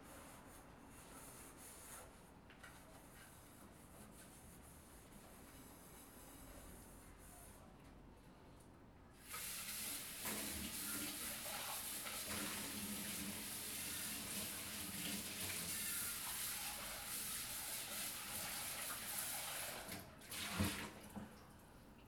Paisagem Sonora de cozinha, gravado com TASCAM DR-40. Field Recording of kitchen.